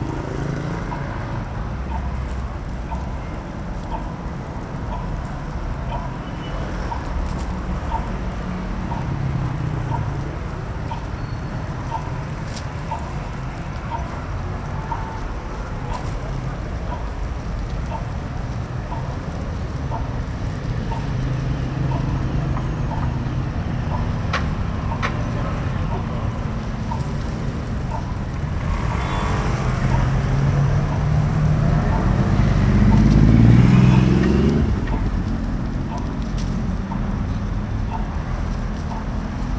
LA BAIGNOIRE DES AGITÉS/Athen's street pulse code
Athina, Greece